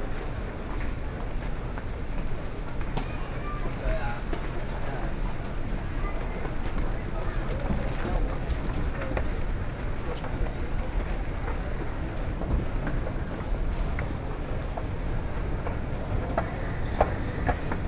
Zhongxiao W. Rd., Taipei - Soundwalk
Underground shopping street ground, from Station to Chongqing S. Rd. Binaural recordings, Sony PCM D50 + Soundman OKM II
Taipei City, Taiwan, 12 October 2013